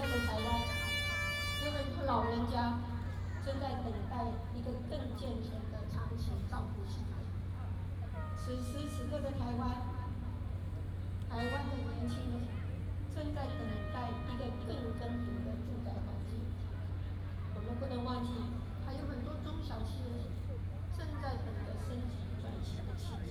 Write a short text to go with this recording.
by democratic elections, Taiwan's first female president